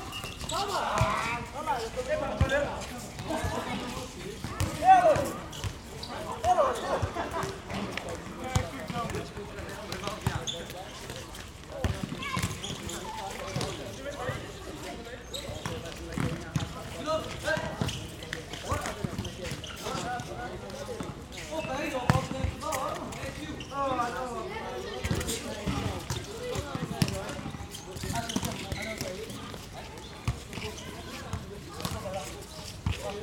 {
  "title": "Aclimação, São Paulo - Young Brasilians playing soccer on a small playground",
  "date": "2018-03-04 16:00:00",
  "description": "Close to the Aclimaçao Park, a few young people are playing soccer on a small playground (used as a basket playground too).\nRecorded by a binaural Setup of 2 x Primo Microphones on a Zoom H1 Recorder",
  "latitude": "-23.57",
  "longitude": "-46.63",
  "altitude": "755",
  "timezone": "America/Sao_Paulo"
}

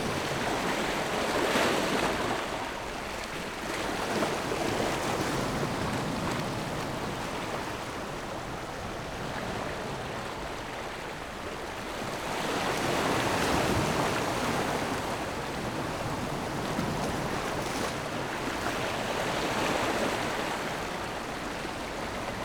{
  "title": "鐵板, Nangan Township - Sound of the waves",
  "date": "2014-10-14 13:49:00",
  "description": "At the beach, Sound of the waves\nZoom H6 +Rode NT4",
  "latitude": "26.14",
  "longitude": "119.92",
  "altitude": "13",
  "timezone": "Asia/Taipei"
}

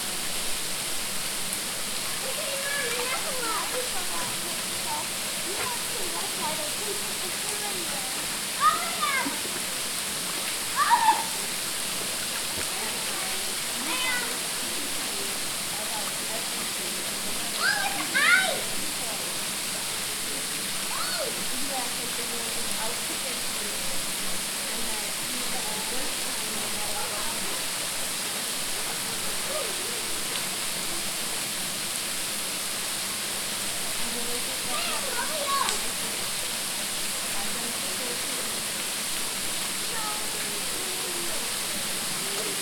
{
  "title": "Unnamed Road, Dodgeville, WI, USA - Saturday at Stephens Falls",
  "date": "2019-08-03 14:30:00",
  "description": "Families taking pictures and playing in the water underneath Stephens' Falls in Governor Dodge State Park. Recorded with a Tascam DR-40 Linear PCM Recorder.",
  "latitude": "43.03",
  "longitude": "-90.13",
  "altitude": "350",
  "timezone": "America/Chicago"
}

